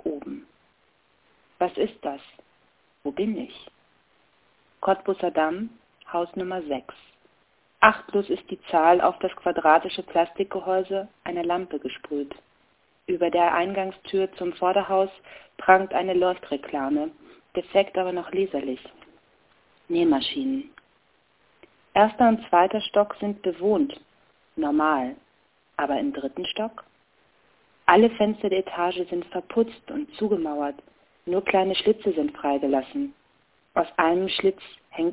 Verheißungsvolles Rot - Verheißungsvolles Rot 09.05.2007 20:57:00
Berlin, Germany